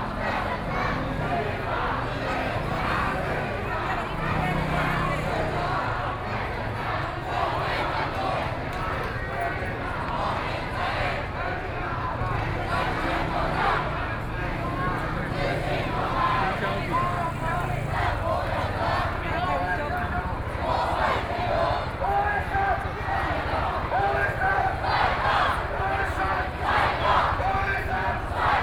Zhongzheng Rd., Tamsui District - At the intersection
Many tourists, The distance protests, Traffic Sound
Please turn up the volume a little. Binaural recordings, Sony PCM D100+ Soundman OKM II
5 April, 17:38